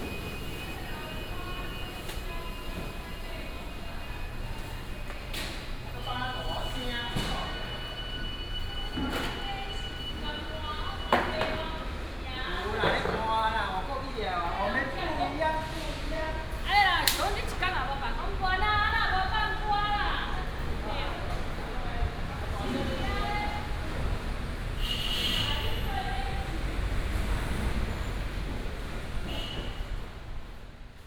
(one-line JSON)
{"title": "武廟市場, Lingya Dist., Kaohsiung City - seafood market", "date": "2018-03-30 11:05:00", "description": "seafood market, Preparing pre-business market", "latitude": "22.63", "longitude": "120.33", "altitude": "15", "timezone": "Asia/Taipei"}